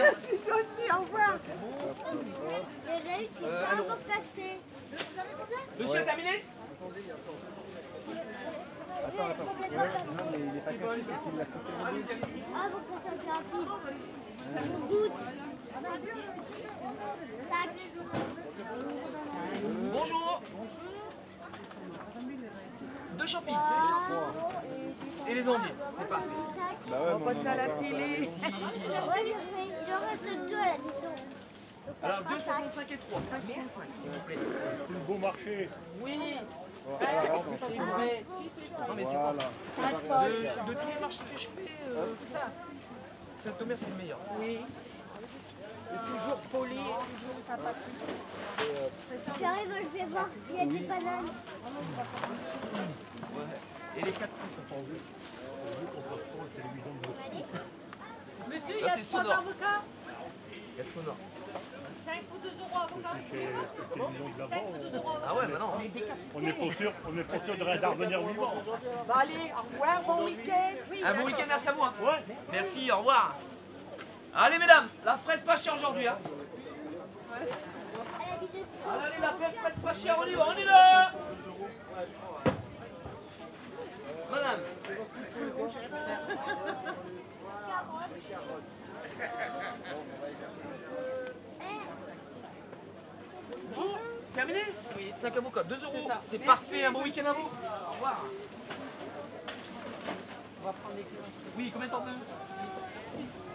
Pl. du Maréchal Foch, Saint-Omer, France - Marché de St-Omer
St-Omer
Ambiance du marché du samedi matin
les fruits et légumes.
26 March 2022, 10:00